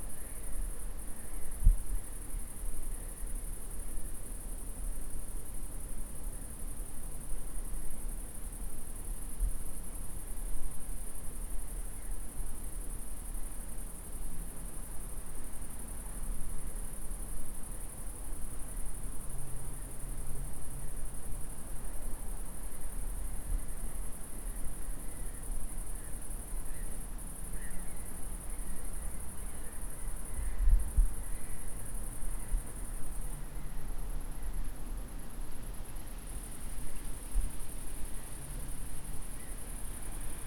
Wroclaw, crickets - crickets and motorway
crickets and motorway